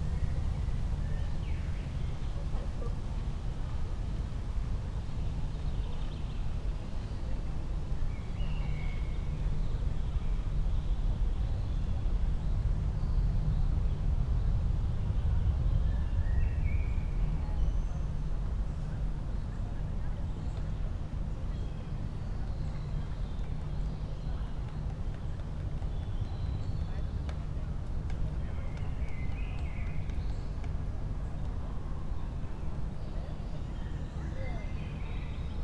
Stadtwald Köln, at pond Adenauerweiher, ambience /w joggers, a cricket, an aircraft and distant Autobahn traffic noise
(Sony PCM D50, Primo EM172)
Regierungsbezirk Köln, Nordrhein-Westfalen, Deutschland